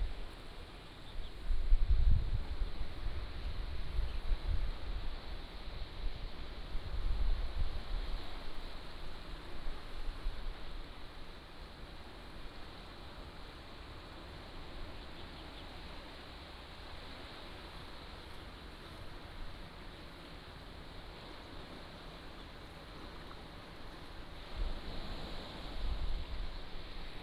Sound of the waves, Small fishing village, In front of the temple, Facing the sea